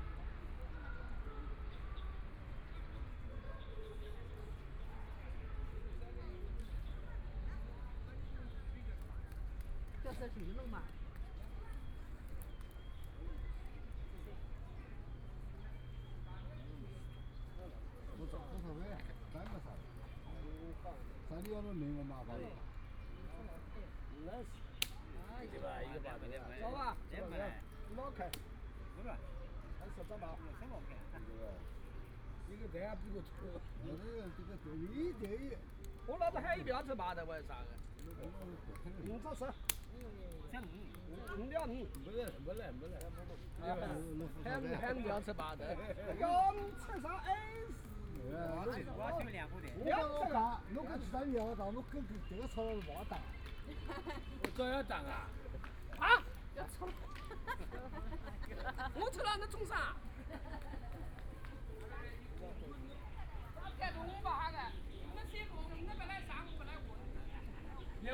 Yangpu Park, Shanghai - Walking through the park
Walking through the park, A group of middle-aged man playing cards, People are walking, In practice the trumpet whole person, Binaural recording, Zoom H6+ Soundman OKM II